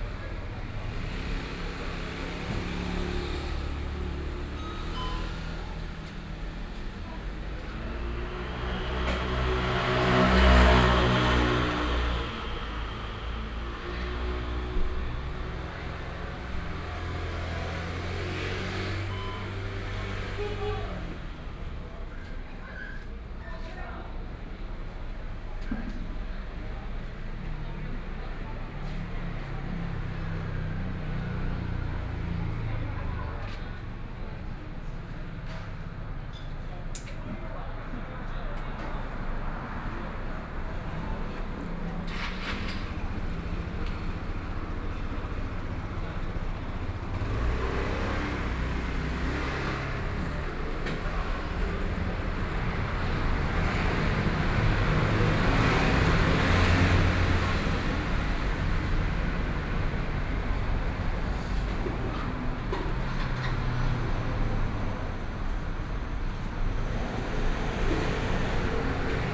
Xinsheng Rd., Taitung City - In front of the convenience store
In front of supermarket convenience, Traffic Sound, Moon Festival
there are many people on the road in the evening, Barbecue